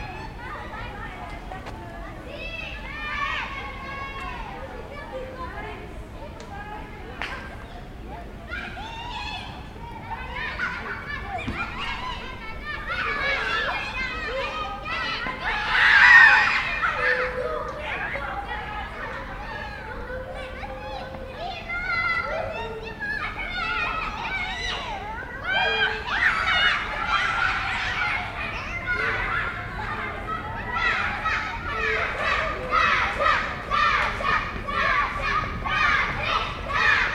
Près de la cour de récréation de l'école primaire Waldeck Rousseau beaucoup de cris d'enfants comme toujours dans ces lieux.